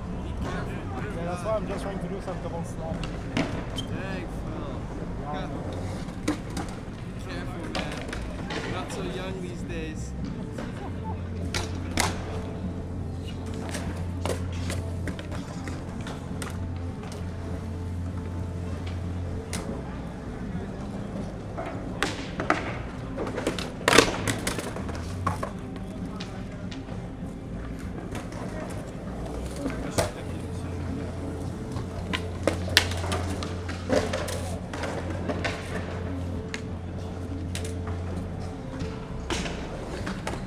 Rue Cloutier, Montréal, QC, Canada - Skatepark
Recorded with Clippy EM272 on zoome F2